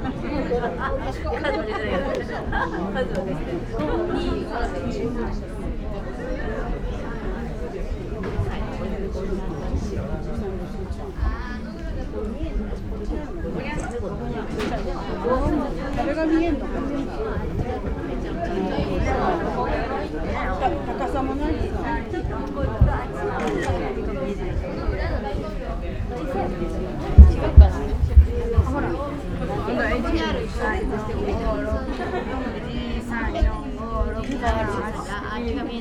silent landscape garden, Ryoanji, Kyoto - several hundred years, fifteen stones, counted again and again